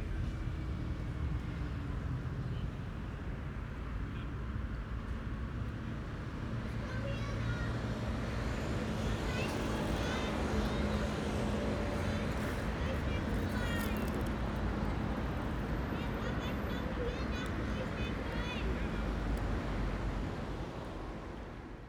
Karl Marx Allee is the showcase avenue of the DDR with a grand architectural conception meant to display the success of the state and socialist ideas. Today the street is under repair. Traffic lanes have been blocked off by lines of temporary red and white plastic barriers, cycle tracks have been enlarged during covid lockdowns and noticeably less traffic is flowing, passing in groups regulated by nearby traffic lights. It is surprisingly quiet, but the openness allows sound, particularly from Alexanderplatz, to fill the space. Three people sit on a bench under the straight rows of trees. A elderly women with a frame moves past in the leafy shade.
Deutschland